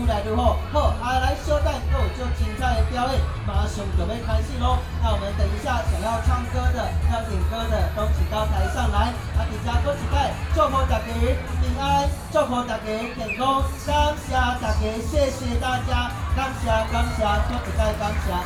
豐年公園, Beitou, Taipei City - Community party
Community party, Sony PCM D50 + Soundman OKM II